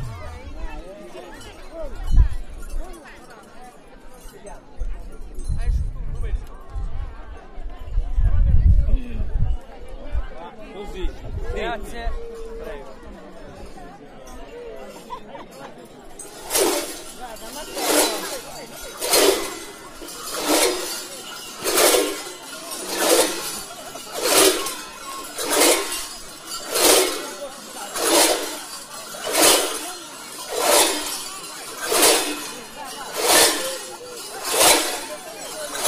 M.Lampis Mamoiada: Mamuthones Parade